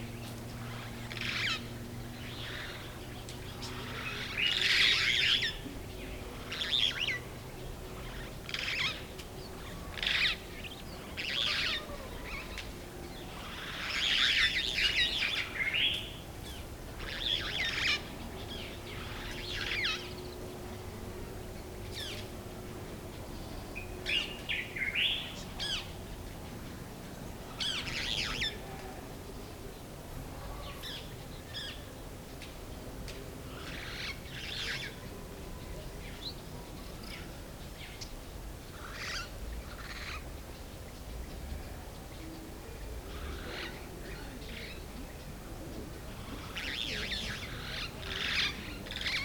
Unnamed Road, Markala, Mali - markala morning birds along the river Niger
markala morning birds along the river Niger, between some gardens.